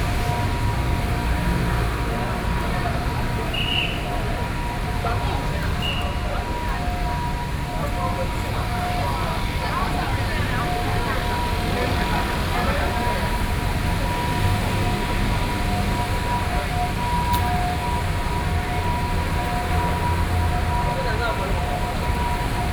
Chiang Kai-Shek Memorial Hall Station - soundwalk
in the MRT stations, From out of the station platform, Sony PCM D50 + Soundman OKM II
Zhongzheng District, Taipei City, Taiwan, 16 August 2013, ~18:00